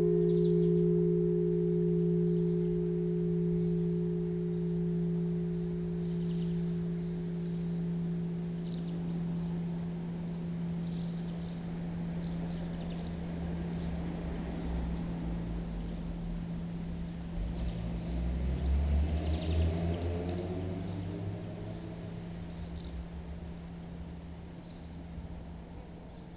Gyé-sur-Seine, France - In the center of Gyé
Near the bridge of the small village called Gyé-Sur-Seine, we are near the Seine, in the Champagne area, in the heart of the champagne vineyard. This recording is a walk in the center of the village : the Seine river, a square with a small power station, enormous tractors passing by and the church ringing. I don't identify the bird song, please help if you can !
1 August, 11:00